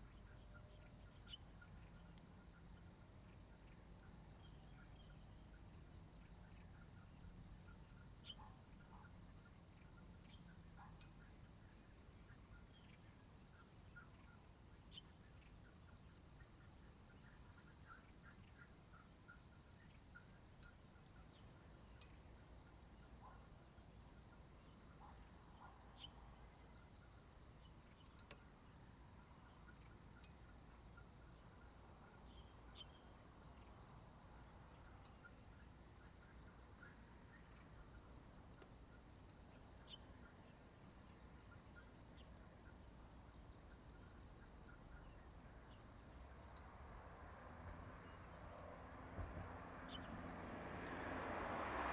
吳江村, Fuli Township - Birds and Traffic Sound
Birds singing, Traffic Sound, Near Highway
Zoom H2n MS+XY